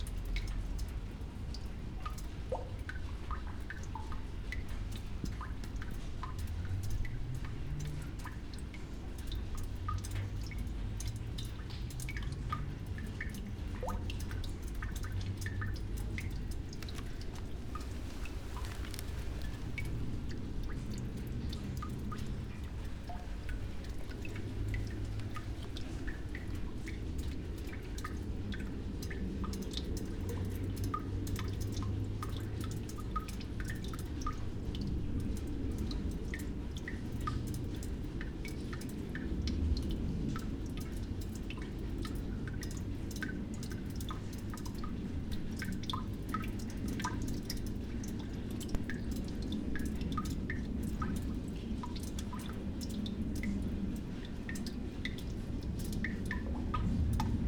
Muzej norosti, Museum des Wahnsinns, courtyard, Trate, Slovenia - rain, old iron drain
Zgornja Velka, Slovenia, 20 June 2015